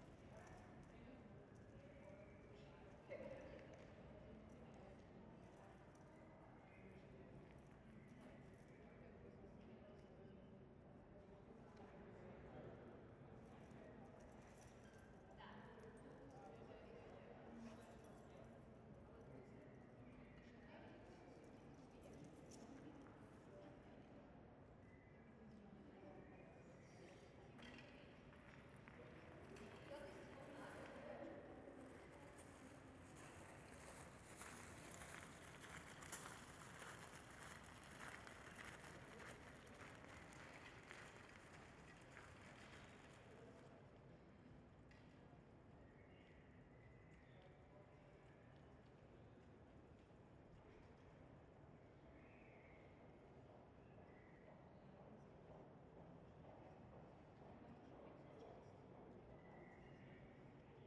Uni, Salzburg, Österreich - In einem Torbogen
Stimmen, Schritte, Passage eines Velos. Jemand telefoniert.